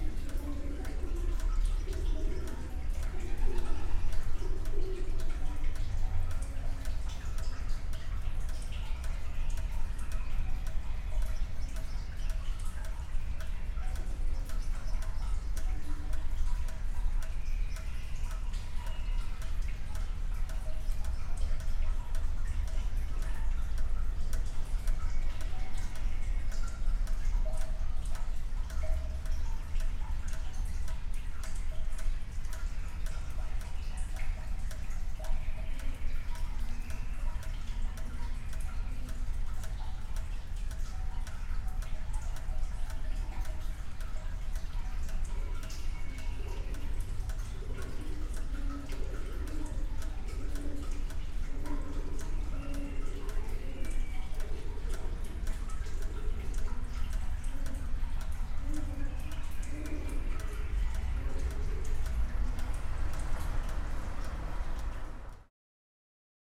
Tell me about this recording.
Trekvlietplein railway bridge after the rain, Den Haag